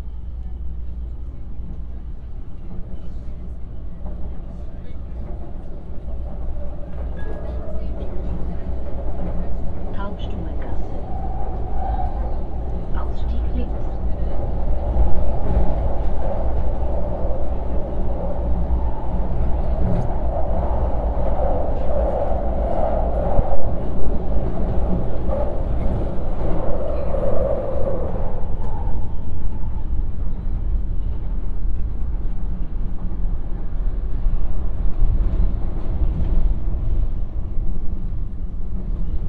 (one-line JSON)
{"title": "Karlsplatz, Wien, Austria - (196) Metro ride to Schottenring", "date": "2017-07-13 17:38:00", "description": "Metro ride from Hauptbahnhof to Schottenring.\nrecorded with Soundman OKM + Sony D100\nsound posted by Katarzyna Trzeciak", "latitude": "48.20", "longitude": "16.37", "altitude": "170", "timezone": "Europe/Vienna"}